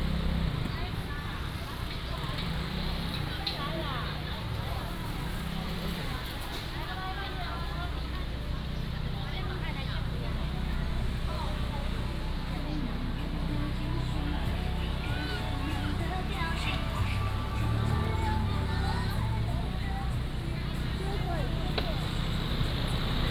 Xinxing St., Dounan Township - walking in the Street
Walking in the traditional market